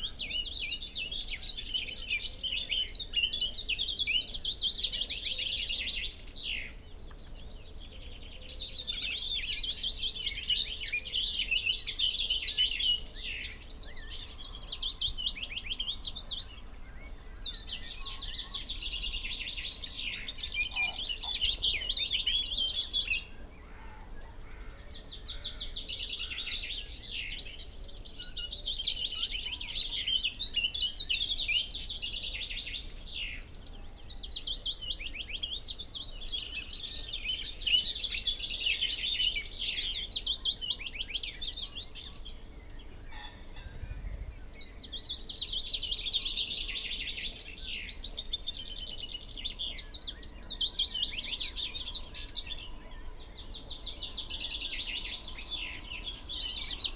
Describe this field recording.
Willow warbler song and call soundscape ... open lavaliers clipped to branch ... songs and calls from ... tawny owl ... common pheasant ... crow ... magpie ... garden warbler ... whitethroat ... yellowhammer ... song thrush ... blackbird ... dunnock ... wren ... some background noise ...